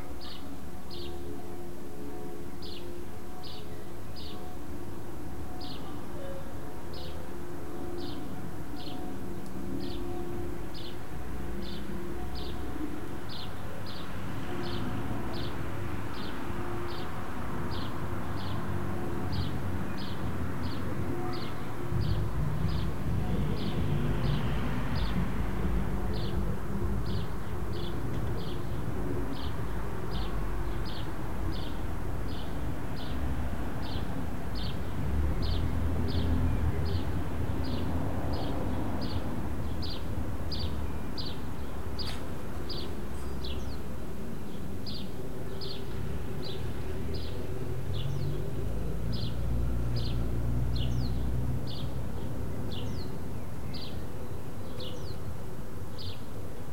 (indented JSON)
{
  "title": "Wusterhausener Str., Eichwalde, Deutschland - Sankt Antonius",
  "date": "2019-04-07 18:00:00",
  "description": "Katholische Kirche Sankt Antonius, Geläut 18:00 Uhr\nZoom H4n",
  "latitude": "52.37",
  "longitude": "13.62",
  "altitude": "37",
  "timezone": "Europe/Berlin"
}